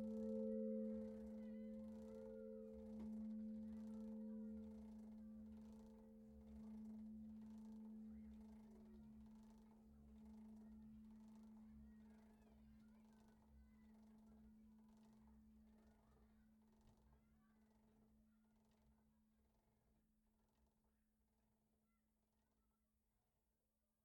Rue de l'Abbaye, Belhomert-Guéhouville, France - Belhomert - Église St-Jean
Belhomert (Eure-et-Loir)
Église St-Jean
la volée
Centre-Val de Loire, France métropolitaine, France, 12 November 2019